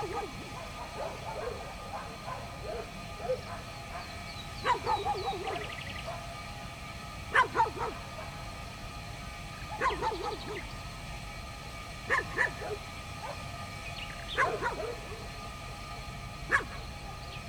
Strada Viilor, Curteni, Romania - Nightingale, dogs and hissing gas
Night singing of nightingale, barking dogs and hissing of the gas pipes in Curteni.